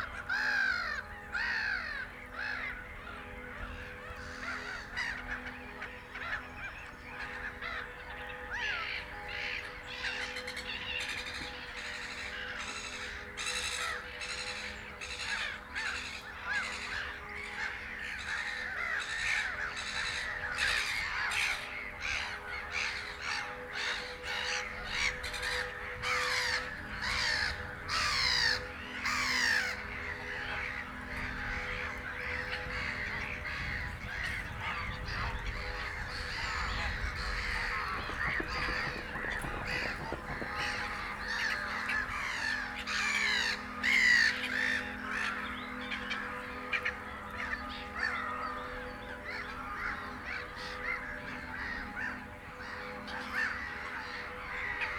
Moorlinse, Buch, Berlin - Easter morning ambience /w water birds
Lots of water birds at the Moorlinse pond, esp. Black-headed gulls (Lachmöwen, Chroicocephalus ridibundus). Easter church bells
(Tascam DR-100MKIII, DPA 4060)
Berlin, Germany, 17 April 2022, 10:00